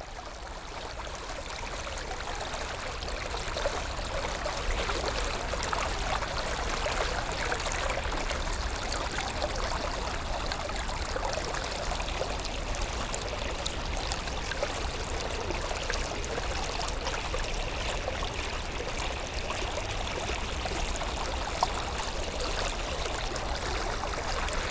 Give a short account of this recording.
The Loing river flowing early on the morning.